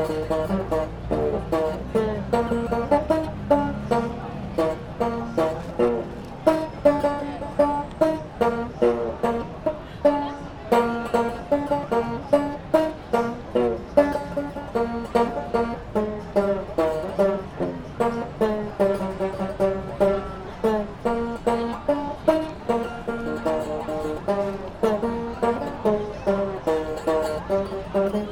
grand mo musician playing in front of Mong Kok station
Mong Kok, Hong Kong